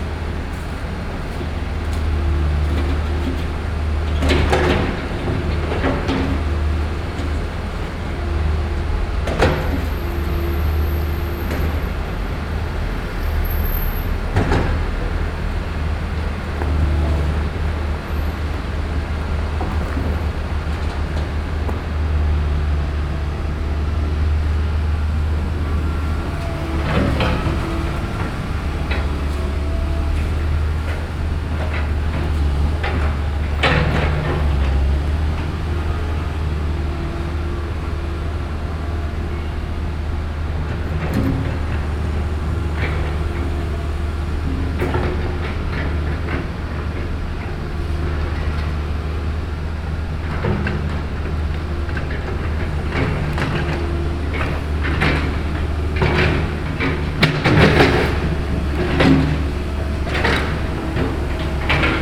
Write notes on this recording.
Brussels, Rue des Vieillards, construction site